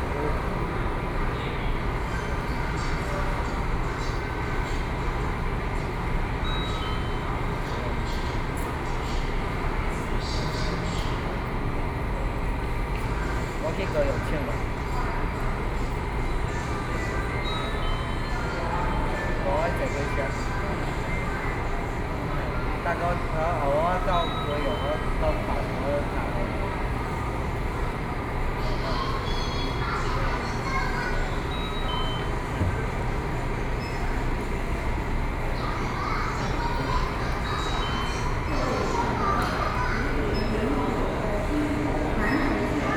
Pingtung Station, Pingtung City - In the station lobby
In the station lobby